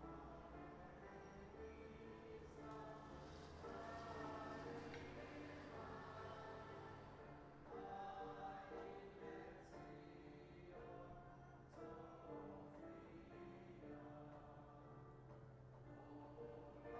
Rosch Ha-Schana, Frankfurt am Main, Deutschland - Street sounds some days before Rosch Ha-Schana
In a few days before the jewish new year some people are singing some songs after a short speech, obviously an open window, while cars are parking, motorcycles are driving down the street...